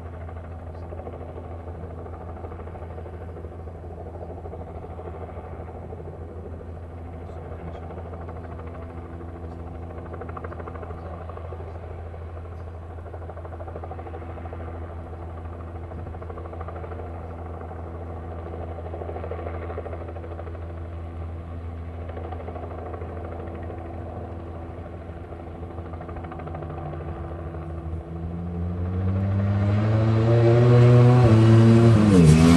West Kingsdown, UK - World Superbikes 2002 ... Qual ...
World Superbikes 2002 ... Qual ... one point stereo mic to minidisk ...
27 July 2002, 11:00